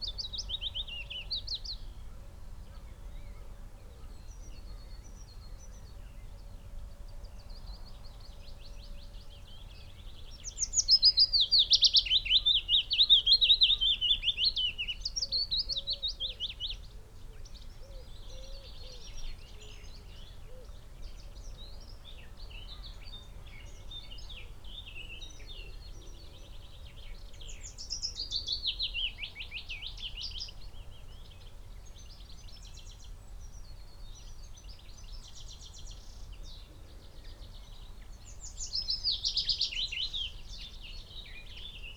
Malton, UK - willow warbler soundscape ...
willow warbler soundscape ... xlr sass on tripod to zoom h5 ... bird song ... calls ... from ... wood pigeon ... yellowhammer ... chaffinch ... pheasant ... wren ... dunnock ... blackcap ... crow ... blackbird ... goldfinch ... linnet ... unattended time edited extended recording ...
8 May, 06:45